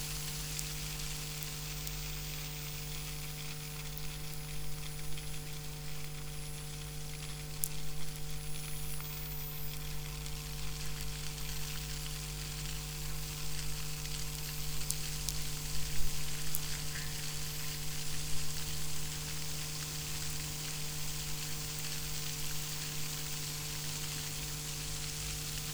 Utena, Lithuania, fountain study
the fountains at local cultural center. the recording has three parts. 1. accoustic, 2. accoustic + electromagnetic field, 3. electromagnetic field (the work of pump motors)
July 2018